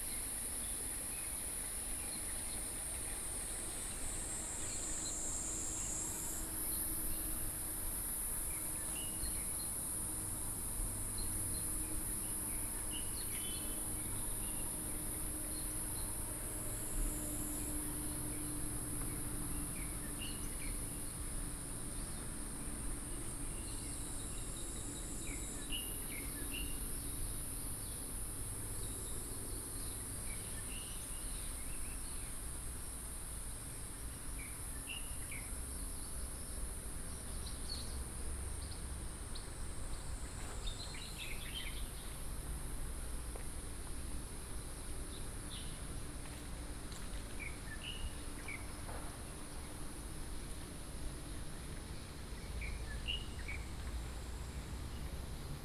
水上巷, 埔里鎮桃米里 - Bird calls
Bird calls, Traffic noise, Stream
Puli Township, 水上巷